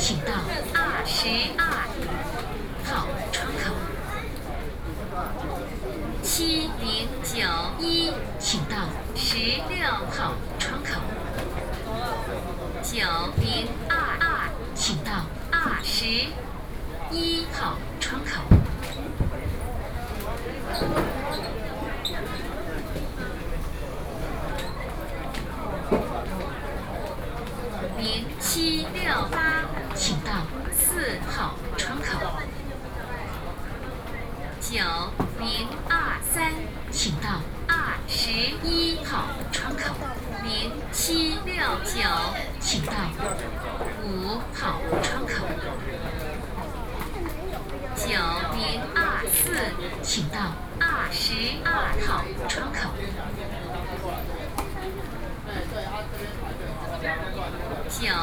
Bereau of Consular Affairs, Taipei City - broadcasting
Waiting for passport, Counter broadcasting, Sony PCM D50 + Soundman OKM II
9 August 2013, 15:05